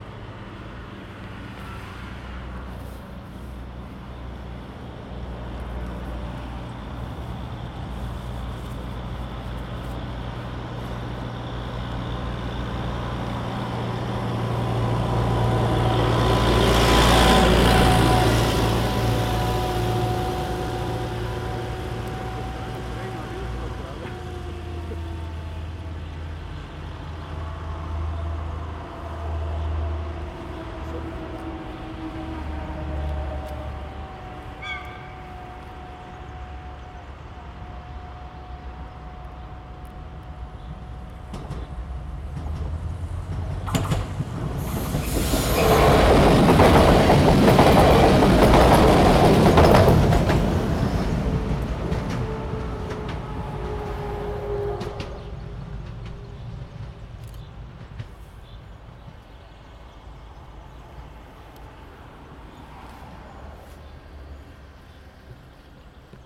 {"title": "Roncegno TN, Italia - Trattore + Treno", "date": "2016-04-27 12:00:00", "description": "Passaggio del trenino della Valsugana in una posizione densa a livello infastrutturale", "latitude": "46.03", "longitude": "11.39", "altitude": "429", "timezone": "Europe/Rome"}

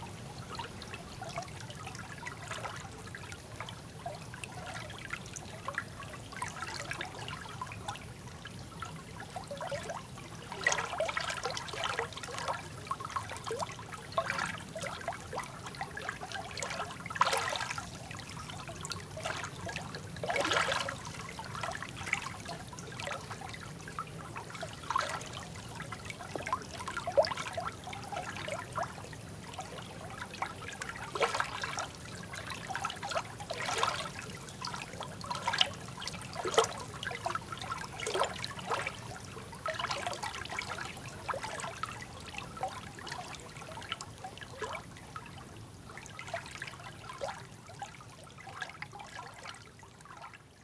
{"title": "Maintenon, France - The Eure river", "date": "2016-07-26 23:05:00", "description": "The Eure river and the small stream, the Guéreau river. Recorded at night as there's very very very much planes in Maintenon. It was extremely hard to record.", "latitude": "48.59", "longitude": "1.58", "altitude": "102", "timezone": "Europe/Paris"}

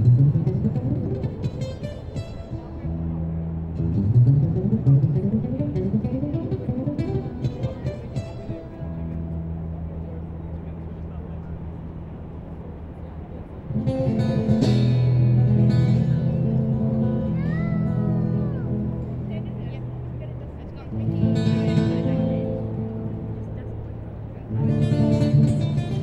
{"title": "neoscenes: guitarist on Circular Quay", "latitude": "-33.86", "longitude": "151.21", "altitude": "17", "timezone": "Australia/NSW"}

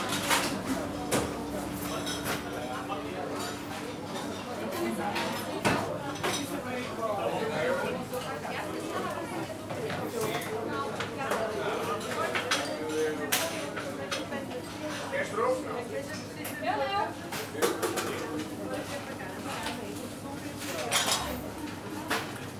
{"title": "Losbon, Rua Augusta, Baixa district - around lunch time in a coffee house", "date": "2013-09-06 12:21:00", "description": "very busy coffee house/bakery/patisserie during lunch time. packed with locals having light meals and coffee at the counter. others just dropping by to pick up cake orders. plenty of adrift tourist, not really knowing what to order, discussing options.", "latitude": "38.71", "longitude": "-9.14", "altitude": "22", "timezone": "Europe/Lisbon"}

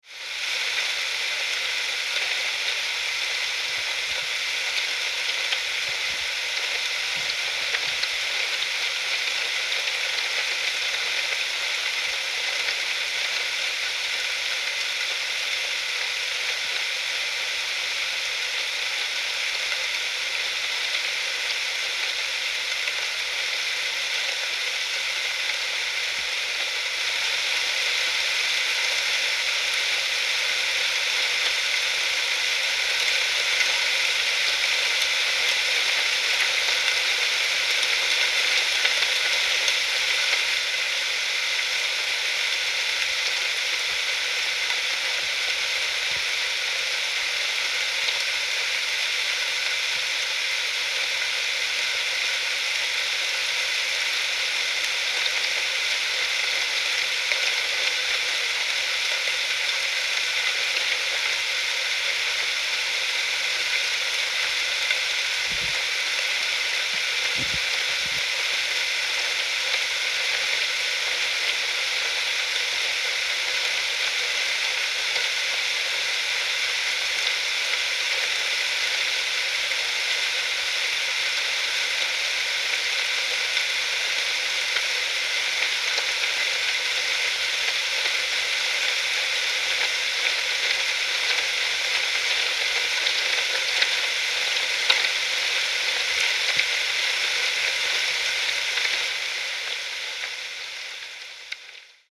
{"title": "Vyskov, Czech Republic - Contact microphone recording of dirty water in the pipe", "date": "2016-10-23 17:50:00", "description": "Internal sounds in the rusty pipe picked up by a contact mic near to a leak from which water sprays. Recording Lena Loehr.", "latitude": "50.42", "longitude": "13.65", "altitude": "255", "timezone": "Europe/Prague"}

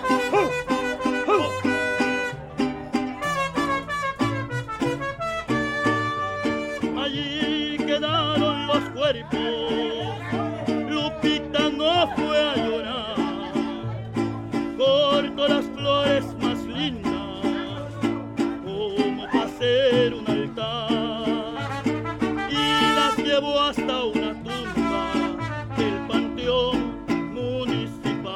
{"title": "C., Centro, Mérida, Yuc., Mexique - Merida - les Mariachis", "date": "2021-10-29 12:00:00", "description": "Merida - Mexique\nLes Mariachis", "latitude": "20.96", "longitude": "-89.62", "altitude": "13", "timezone": "America/Merida"}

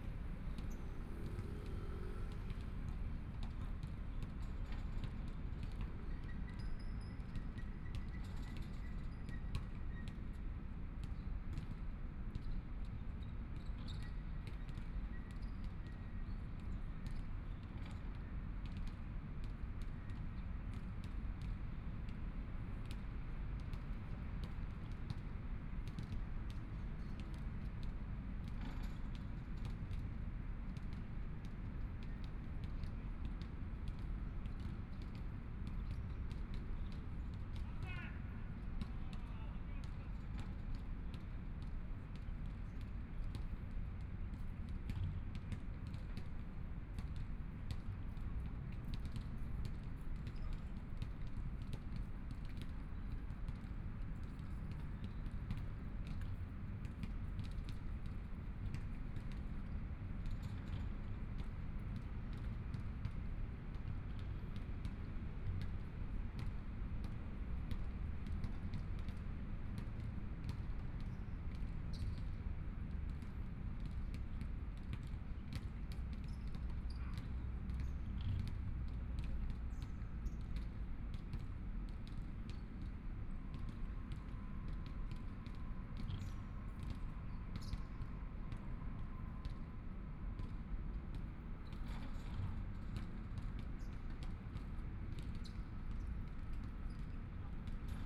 {"title": "花蓮市國聯里, Taiwan - Sitting in the park", "date": "2014-02-24 16:33:00", "description": "Sitting in the park, Traffic Sound, Playing basketball voice\nBinaural recordings\nZoom H4n+ Soundman OKM II", "latitude": "23.99", "longitude": "121.60", "timezone": "Asia/Taipei"}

